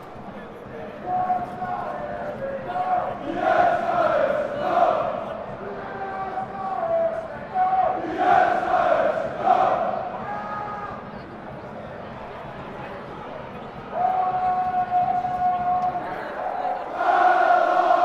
At the end, the home team manage to score, and a loud roar comes from the home teams supporters. Enjoy